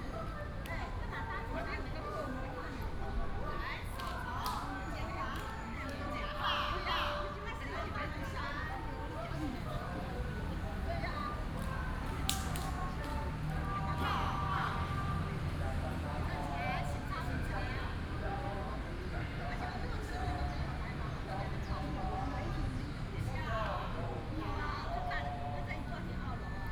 龍陣二號公園, Da’an Dist., Taipei City - in the Park
Morning in the park, Group of elderly people doing aerobics
Da’an District, Taipei City, Taiwan, 20 July 2015, 07:30